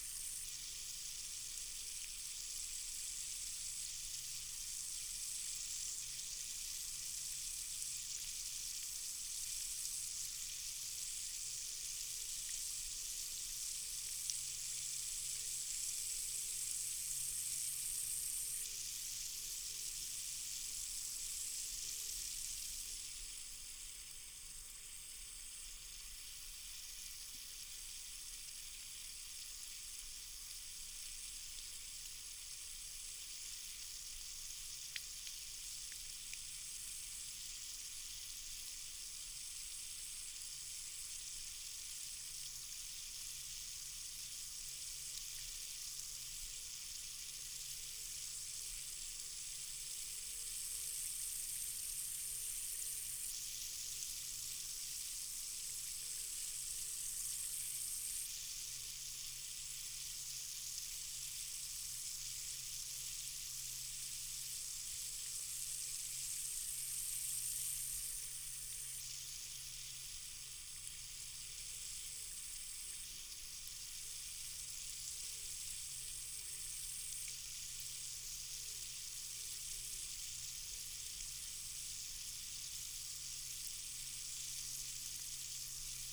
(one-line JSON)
{"title": "Malton, UK - water leaking from borehole ...", "date": "2022-07-22 06:17:00", "description": "water leaking from borehole ... supplies to an irrigation system ... dpa 4060s in parabolic to mixpre3 ... spraying a potato crop ...", "latitude": "54.13", "longitude": "-0.56", "altitude": "105", "timezone": "Europe/London"}